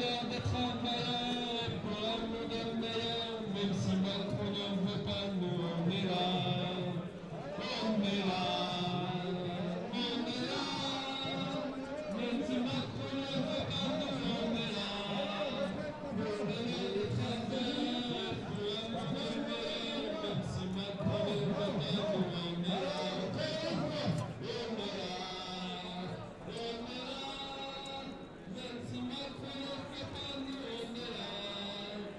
Quai des Pêcheurs, Strasbourg, Frankreich - demo 6.02.2020 réforme des retraites strasbourg
manifestation chant cgt
Grand Est, France métropolitaine, France